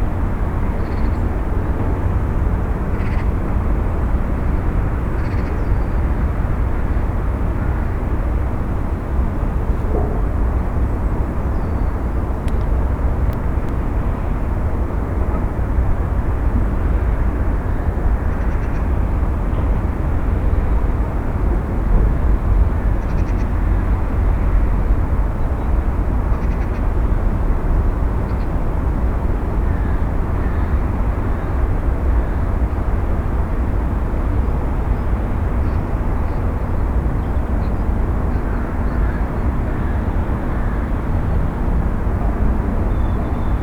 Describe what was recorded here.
up on the city, it seems like all the noise is coming there, car traffic, factories, a few winter birds across the recording. PCM-M10, SP-TFB-2, binaural.